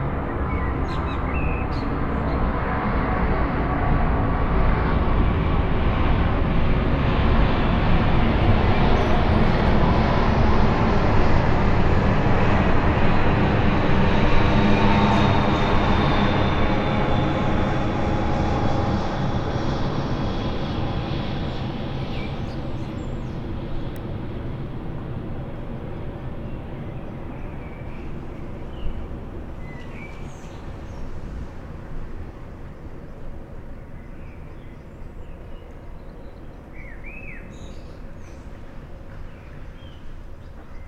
Wiewiórcza, Gdańsk, Poland - landing plane flyover, birdsong
LOM Mikrousi mounted binaurally, into a Tascam DR-100 mk3. An airliner jet landing at the nearby Lech Walesa Airport (GDN).
województwo pomorskie, Polska, 5 June